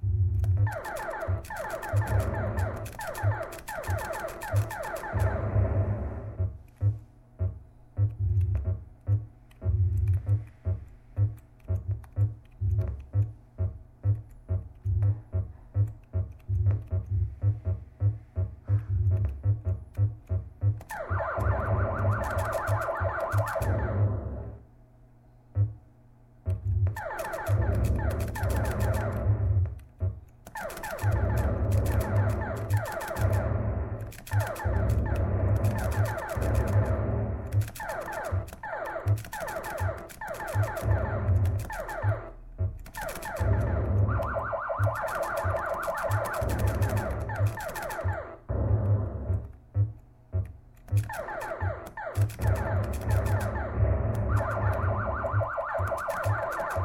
playing with asteroids, glorious electronic game of my youth-online version
Ascoli Piceno AP, Italy, 22 May